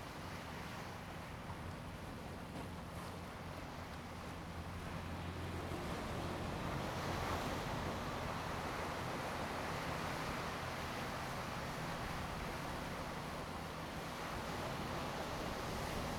On the coast, Sound of the waves
Zoom H2n MS +XY
1 November 2014, 13:46